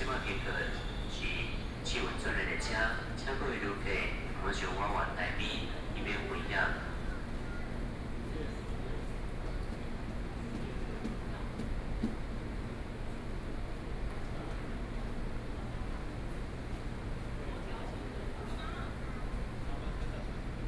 On the platform waiting for the train, Sony PCM D50 + Soundman OKM II
Taipei City, Taiwan, 12 August 2013